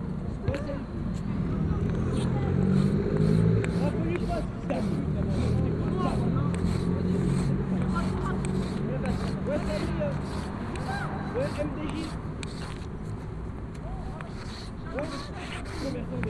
Givors, Rue casanova - Casanova Song
Durant un mois environ, un jour ou deux par semaine, nous nous installons, un seul artiste, parfois eux, trois ou quatre, sur une place de Givors, face à un lycée. Place dent creuse, délaissé urbain en attente de requalification, entourée d'immeubles, avec des vestiges carrelés d'un ancien immeuble, qui nous sert de "salon" en plein air. Canapés et fauteuils, étrange pèche, lecture et écritures au sol, tissages de fil de laine, écoute, dialogues. Les lycéens, des adultes, même des policiers; viennent nous voir, tout d'abord intrigués de cette étrange occupations, parlent de leur quartier, font salon... Tout ce que l'on recherche dans cette occupation poétique de l'espace public. Nous écrivons, photographions, enregistrons... Matière urbaine à (re)composer, traces tranches de ville sensibles, lecture et écritures croisées de territoires en constante mutation... Et sans doute un brin de poésie, visiblement apprécié, dans ce monde violent, incertain et inquiet.
Givors, France